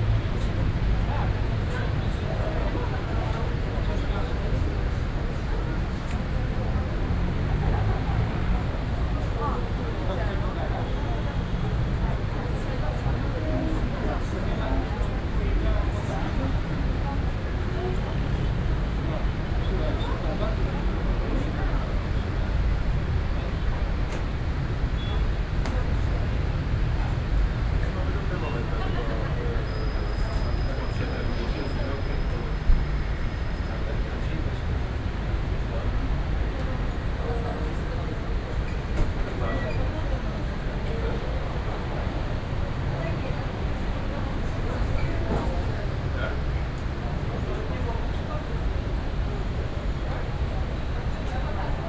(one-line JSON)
{"title": "Athens, Hotel King George - top floor", "date": "2015-11-06 17:13:00", "description": "(binaural) recorded on top floor terrace of the hotel with a view on the Syntagma Square. An orchestra playing their last song this evening, brass instruments reverberated off the walls of buildings surrounding the square. traffic, swoosh of fountains, people talking on the terrace. (sony d50 + luhd pm1bin)", "latitude": "37.98", "longitude": "23.73", "altitude": "105", "timezone": "Europe/Athens"}